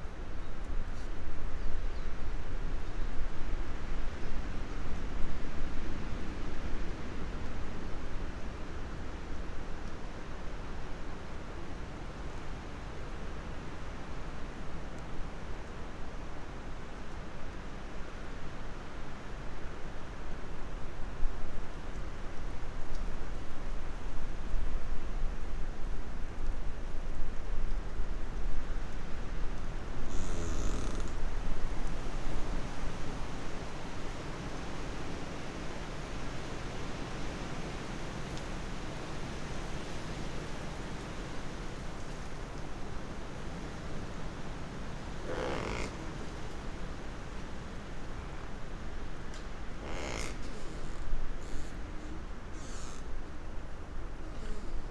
Lithuania, soundscape at the bunkers of lithuanian reistance partisans
there was huge lithuanian partisans resistance against soviets in 1944-1945. people built underground bunkers to hide from and to fight with occupants. windy day at the remains of such bunker
Panevėžio rajono savivaldybė, Panevėžio apskritis, Lietuva, March 2020